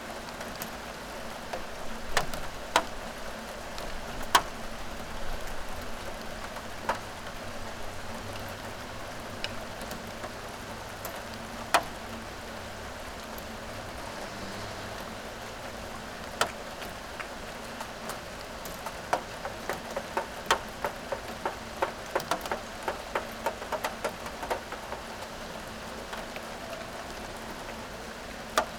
from/behind window, Mladinska, Maribor, Slovenia - rain, december